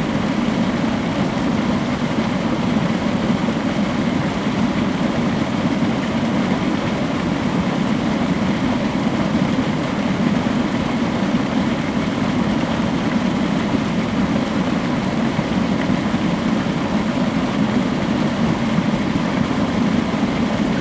{"title": "Výškov, Česká republika - pipes", "date": "2016-10-18 15:00:00", "description": "sounds of the stream pipes from the sedimentation lake of the power station Počerady", "latitude": "50.42", "longitude": "13.65", "altitude": "250", "timezone": "Europe/Prague"}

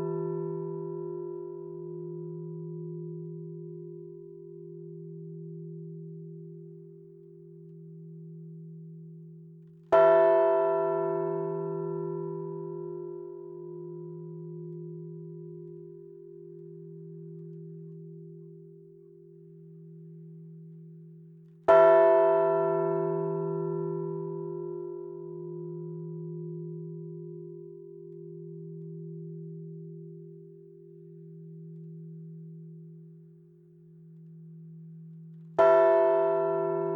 {"title": "Rue Jean Jaurès, Haspres, France - Haspres - Département du Nord - église St Hugues et St Achere - Tintements.", "date": "2021-04-19 10:15:00", "description": "Haspres - Département du Nord\néglise St Hugues et St Achere\nTintements.", "latitude": "50.26", "longitude": "3.42", "altitude": "43", "timezone": "Europe/Paris"}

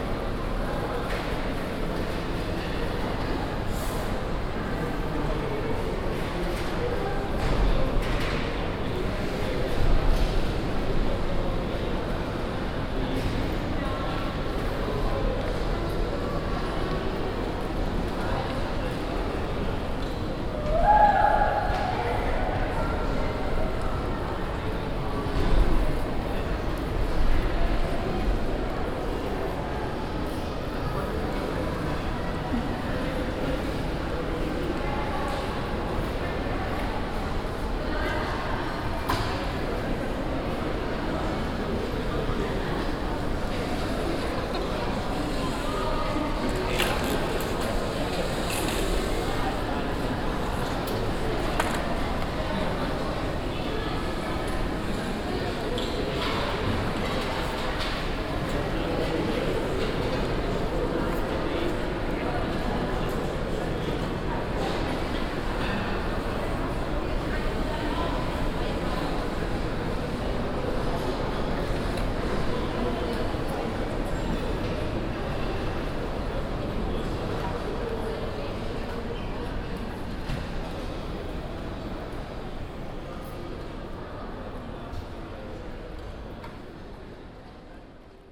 {"title": "essen, rathaus gallery, west", "date": "2011-06-08 22:42:00", "description": "inside the rathaus gallery on the west side of the building. A shopping zone in this area more focused on fast food restaurants.\nIn der Rathaus Galerie auf der West Seite des Gebäudes. Eine Einkaufszone die auf dieser Seite mehre Fast Food Restaurants konzentriert.\nProjekt - Stadtklang//: Hörorte - topographic field recordings and social ambiences", "latitude": "51.46", "longitude": "7.02", "altitude": "78", "timezone": "Europe/Berlin"}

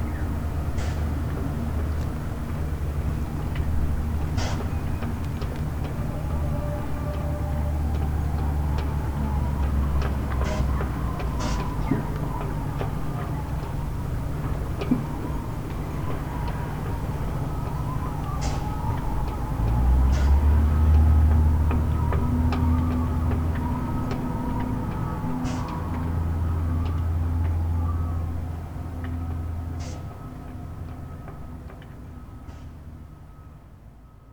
{"title": "lemmer, vuurtorenweg: parkplatz - the city, the country & me: parking area vis-à-vis of a concrete factory", "date": "2011-06-21 10:15:00", "description": "drone and mechanical noise of a concrete factory, wind blows through sailboat masts and riggings\nthe city, the country & me: june 21, 2011", "latitude": "52.84", "longitude": "5.71", "altitude": "4", "timezone": "Europe/Amsterdam"}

